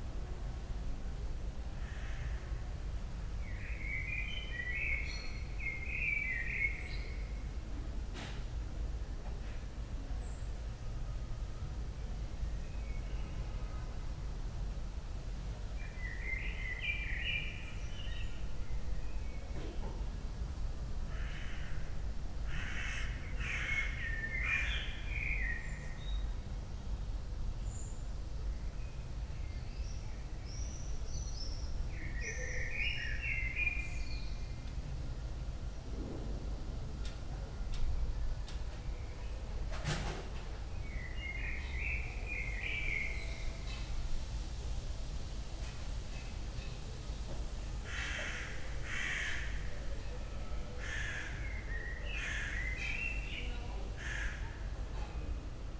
spring evening ambience in backyard, sounds from inside, crows, blackbird
15 June 2019, ~22:00